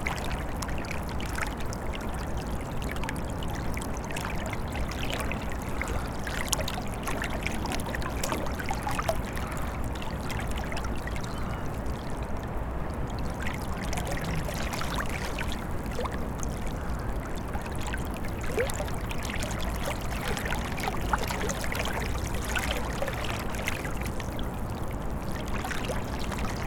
Recording of a river flowing past a melting frozen ice ridge. Small pieces of ice are floating by and sometimes bumping into the melted edge. Cityscape and birds are also heard in the distance. Recorded with ZOOM H5.